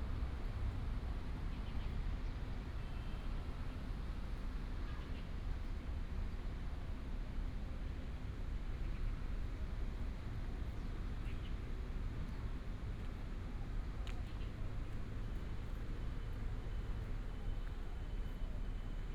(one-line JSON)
{"title": "National Museum Of Natural Science, Taiwan - Botanical garden", "date": "2017-03-22 14:08:00", "description": "walking in the Botanical garden, Traffic sound", "latitude": "24.16", "longitude": "120.67", "altitude": "97", "timezone": "Asia/Taipei"}